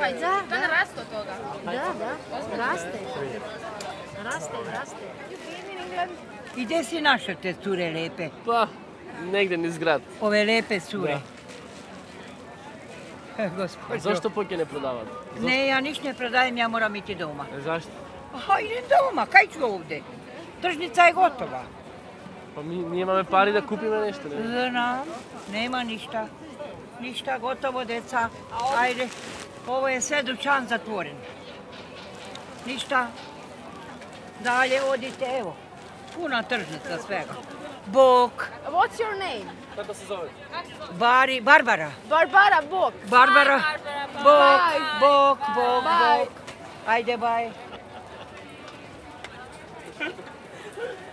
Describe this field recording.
atmosphere of the market with tree dialogues between urban customers and farmers